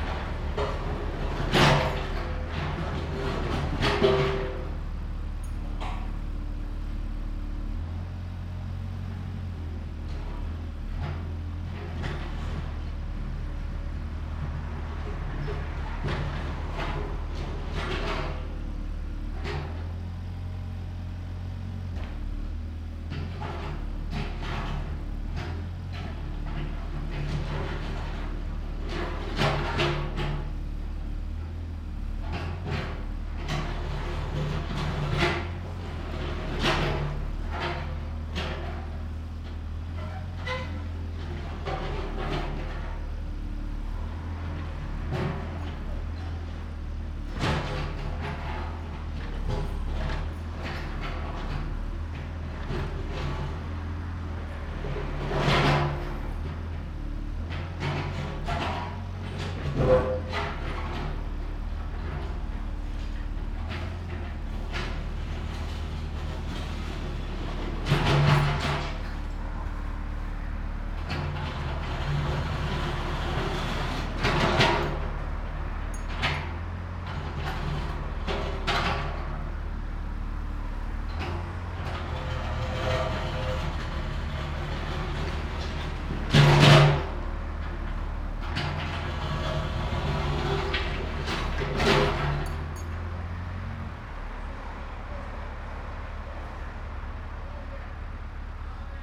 Nice weather for binaural recordings.
The infinite variety of noises is infinite.
Rijeka, Croatia, 28 February, 08:00